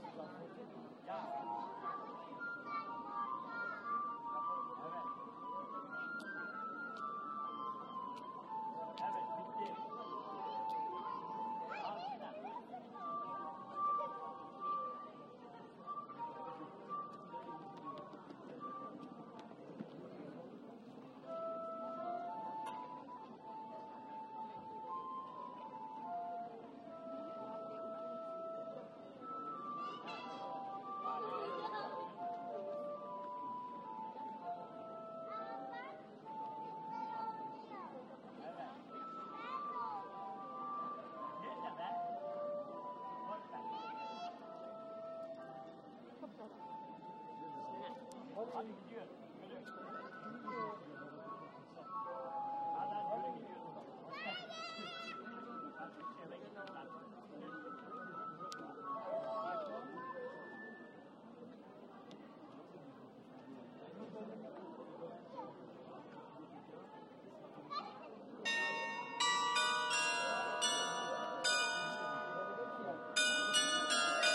Am Markt, Hameln, Germany PIED PIPER CHIMES PLAY (Rattenfänger Glockenspiel) - PIED PIPER CHIMES PLAY (Rattenfänger Glockenspiel)
Sound Recording of "PIED PIPER CHIMES PLAY" (Rattenfänger Glockenspiel) in the center of Hameln every morning. Tourist attraction based of history of Hameln and Pied Piper story with rats. These bells represent part of the story. In the sound recording is heard the performance of bells & pied piper flute.
Recorded with my first recorder ZOOM H4n PRO
External Binaural Microphones
Landkreis Hameln-Pyrmont, Niedersachsen, Deutschland, 22 June 2017, ~16:00